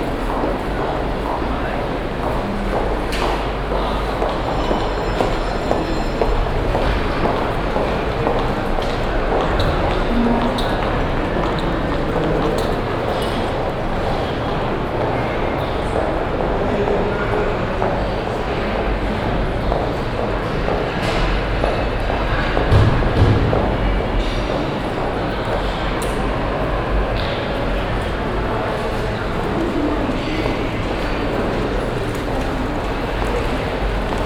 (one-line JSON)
{"title": "Altstadt, Bremen, Deutschland - bremen, katharinenklosterhof, shopping mall", "date": "2012-06-13 15:00:00", "description": "Inside the glass roofed shopping center. The sound of steps passing by on the solid stone pavement.\nsoundmap d - social ambiences and topographic field recordings", "latitude": "53.08", "longitude": "8.81", "altitude": "21", "timezone": "Europe/Berlin"}